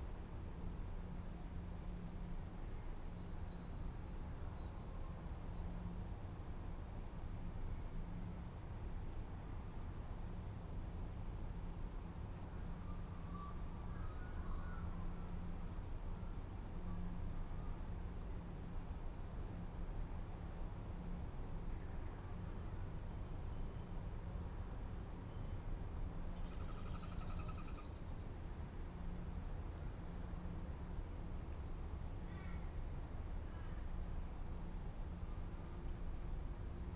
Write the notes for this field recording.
World Listening Day. There is a steady background noise of distant traffic and then you can hear ravens, a magpie, a blue wren, a magpie lark, crested pigeons flying - and one of my chickens.